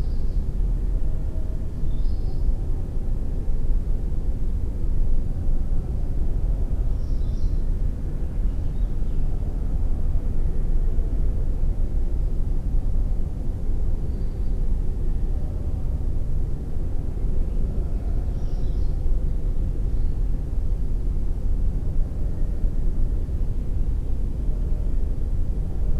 Kidricevo, Slovenia - disused factory resonance
this factory building is slowly being dismantled, but in the meantime a performance group is rehearsing a new theater piece in it. this however is recorded from far, far (hundreds of meters) down one passageway, in the heart of the building, with sounds of the rehearsal, the rest of the factory complex, and the world outside resonating in the air.
Kidričevo, Slovenia, 18 June 2012